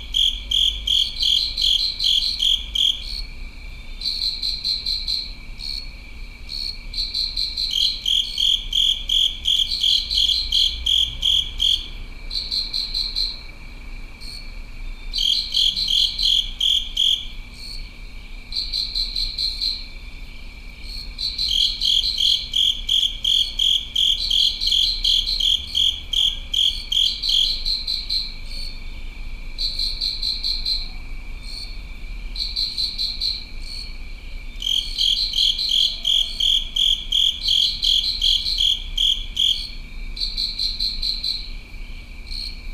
귀뚜라미 X 배수관 crickets within a covered drain
귀뚜라미 X 배수관_crickets within a covered drain
강원도, 대한민국, 4 October, 23:45